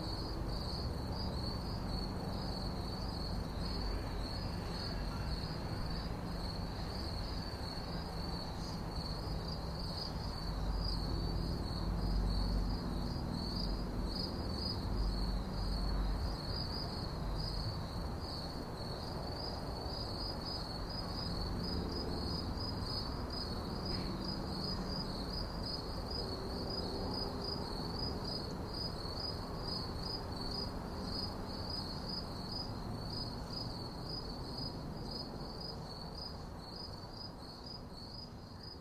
Late Night Crickets, Santa Fe
NM, USA